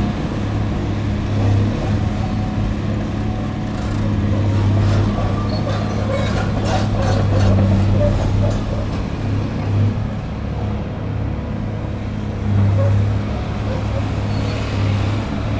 Brussels, Rue Godecharle, Chantier - Construction site.
Ixelles, Belgium